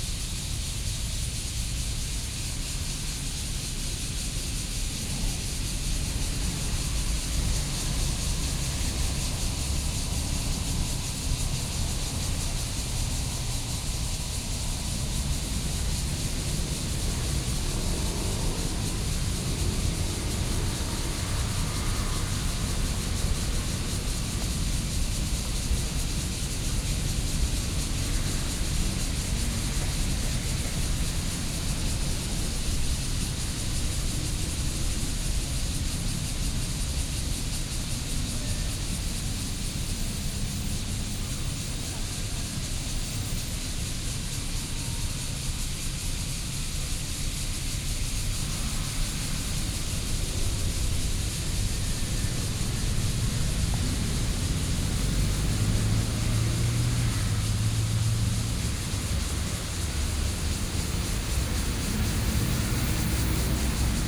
Daye Rd., Beitou Dist. - Sitting on the roadside
Sitting on the roadside, Hot weather, Cicadas sound, Traffic Sound
Taipei City, Taiwan, 9 July 2014